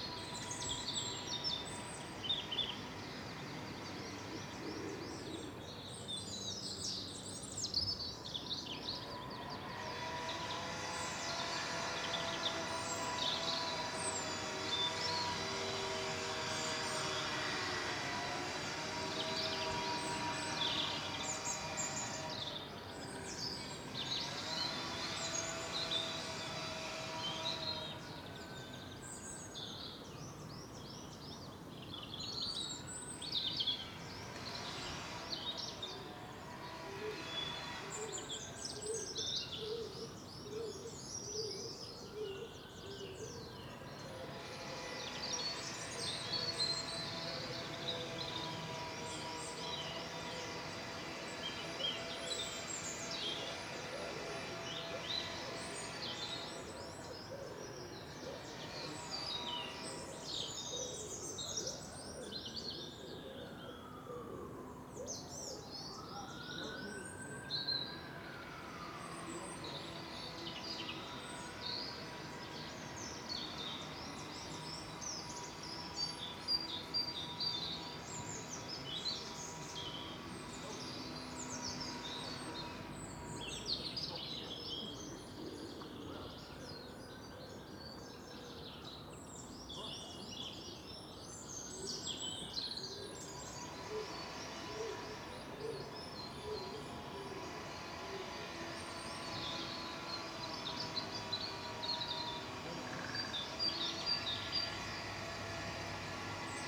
Contención Island Day 72 outer east - Walking to the sounds of Contención Island Day 72 Wednesday March 17th
The Drive High Street Moorfield Little Moor Jesmond Dene Road Osborne Road Mitchell Avenue North Jesmond Avenue Newbrough Crescent Osborne Road Reid Park Road
By a 12th century chapel
a place of pilgrimage
in St Mary’s name
A dell below me is overgrown
untended
and a riot of birds
Against the bright sunlight
I see mainly shapes
flying in and out
Two bursts of a woodpecker’s drum
counterpoint
to the bin wagon’s slow thumping approach
England, United Kingdom, 17 March